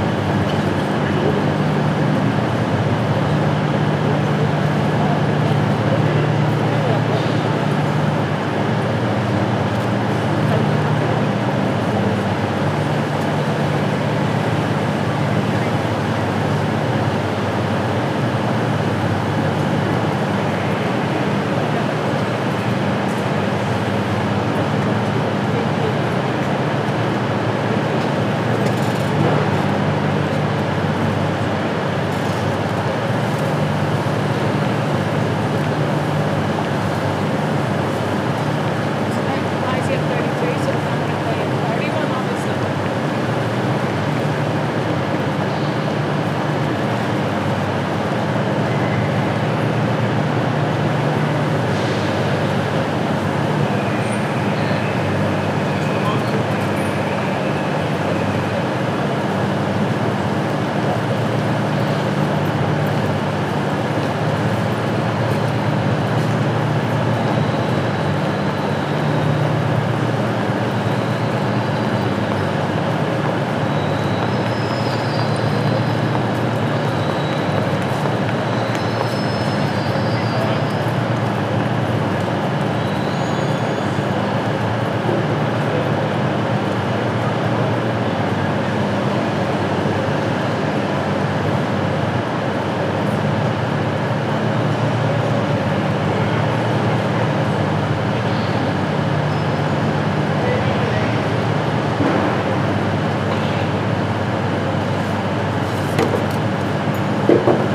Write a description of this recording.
glasgow central station, rush hour, diesel train engine rumble